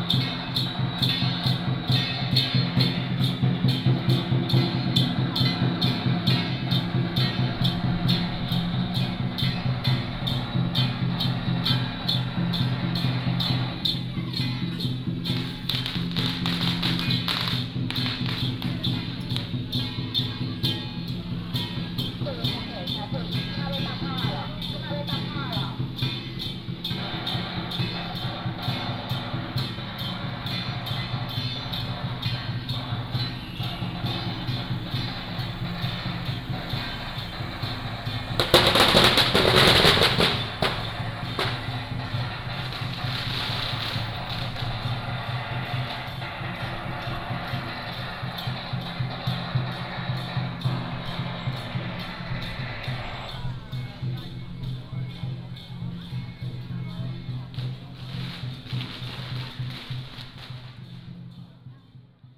In the square of the temple, True and false firecrackers sound